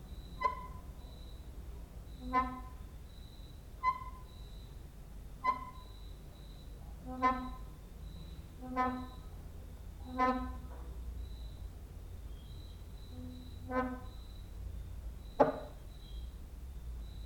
Mladinska, Maribor, Slovenia - late night creaky lullaby for cricket/15/preludij
cricket outside, exercising creaking with wooden doors inside